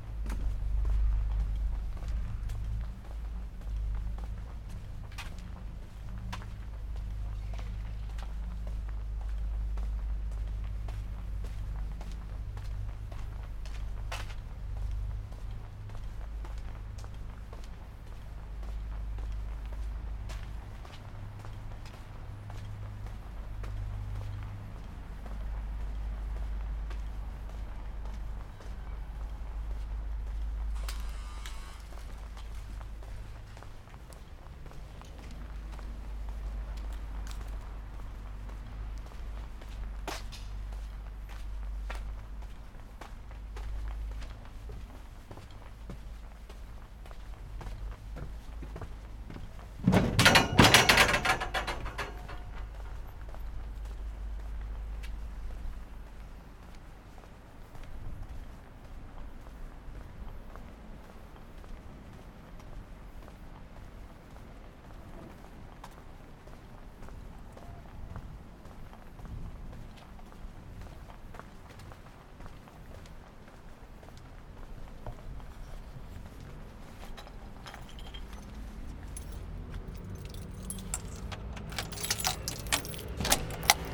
Maria-Theresialei, Antwerpen, Belgium - Walk to street works generator, Antwerp
This is a short walk to capture the low drones of a construction works generator that remains on all night, while the building is ongoing during the day. The audio file has a wide range of dynamics, with quieter walks at the start and finish, and the louder drones starting around the 1:30 mark.
Equipment: Sony PCM - D100 and a little bit of processing.